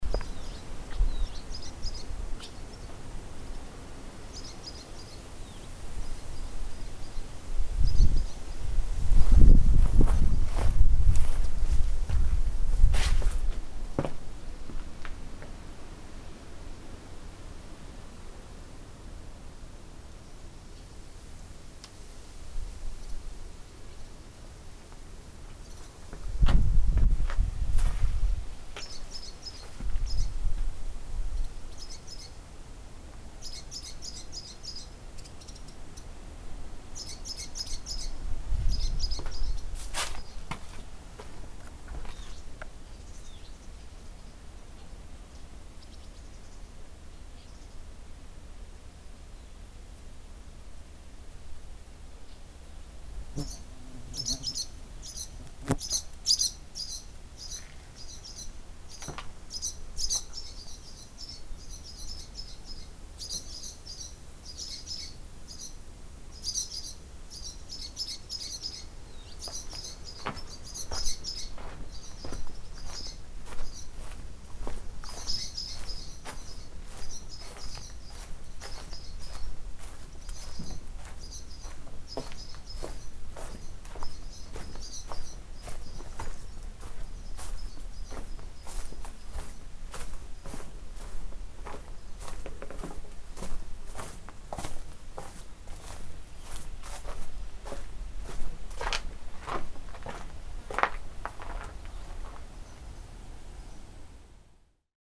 Grass Lake Sanctuary - Barn Swallows
Barn swallows flying in and out of the barns lower level, where their nests are.
MI, USA, 18 July 2010